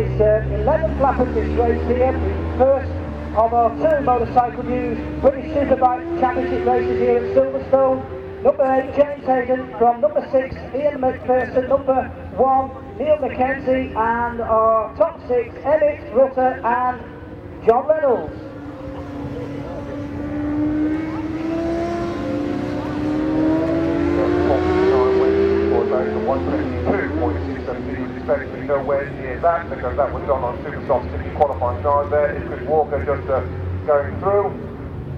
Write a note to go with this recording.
BSB 1998 ... Superbikes ... Race 1 ... commentary ... one point stereo mic to minidisk ... almost the full race distance ... time is optional ...